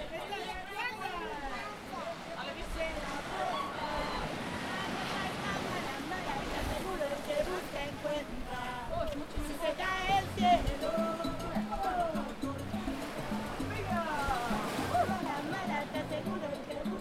Pasaje Puertito Sau, El Puertito, Santa Cruz de Tenerife, Hiszpania - Nightfall at El Puertito
Everybody already left the beach. Two girls are still dancing. Kids don't want to go back home.